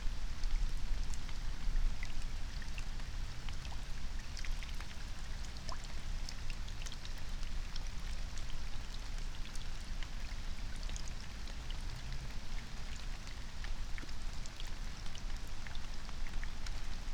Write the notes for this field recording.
22:33 Berlin, ALt-Friedrichsfelde, Dreiecksee - train triangle, pond ambience